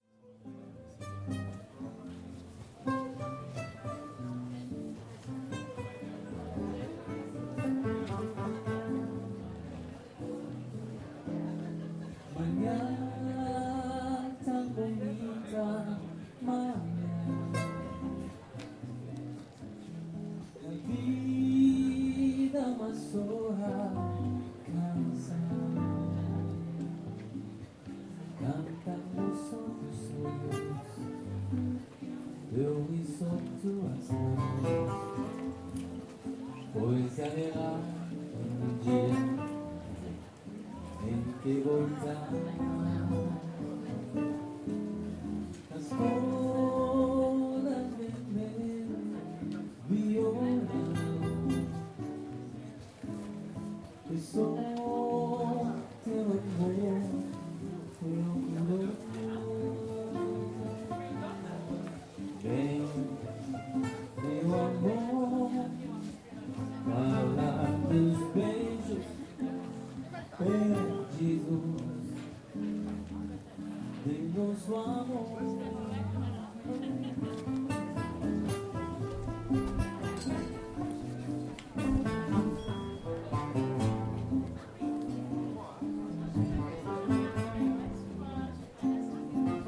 Market day in Lourmarin
Two singers entertaining the people on the market
Lourmarin, France, 23 September 2011, 12:30